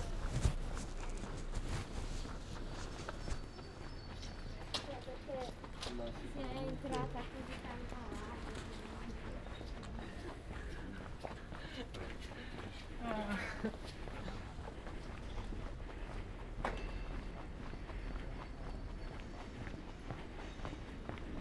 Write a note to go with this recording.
"Sunday transect NW in Torino in the time of COVID19": Soundwalk, Chapter CLXIV of Ascolto il tuo cuore, città. I listen to your heart, city, Sunday, March 28st 2021. One way walk to a borderline “far destination”: a transect direction NorthFirst day of summer hour on 2021. One year and eighteen days after emergency disposition due to the epidemic of COVID19. Start at 2:22 p.m. end at 3:33 p.m. duration of recording 01:11:10. The entire path is associated with a synchronized GPS track recorded in the (kmz, kml, gpx) files downloadable here: